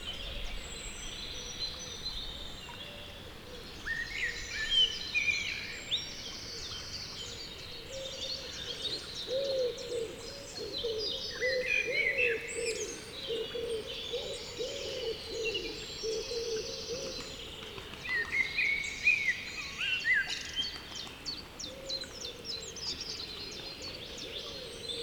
Dartington, Devon, UK - soundcamp2015dartington river blackbird two